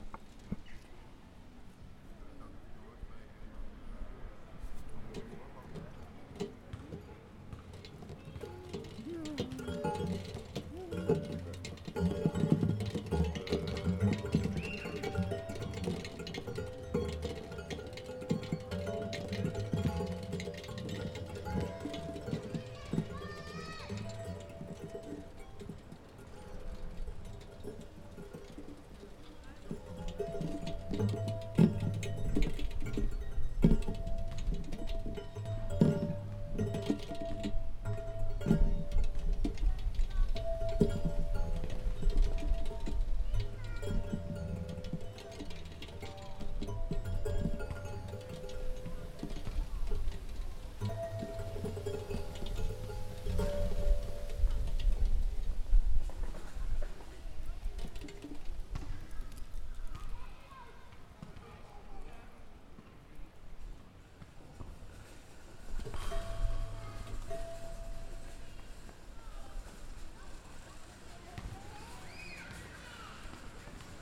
Henrick de Keijzerplein, Amsterdam, Nederland - Geluids-speelobject/ Sound Playobject
(description in English below)
Dit speelobject heeft een hoop tumult in de wijk veroorzaakt. Het geluid ontstaat doordat een balletje tegen platen aanslaat in een ronddraaiende schijf. Een aantal buurtbewoners vond het geluid te hard en heeft erop gestaan dat het geluid gedempt zou worden. Dit is gebeurd in de vorm van een balletje dat minder geluid maakt.
This play object has caused a lot of uproar in the district. The sound comes from a ball that strikes against plates in a rotating disk. Some residents found the sound too loud and insisted that the sound would be muffled. This is done in the form of a ball that makes less noise.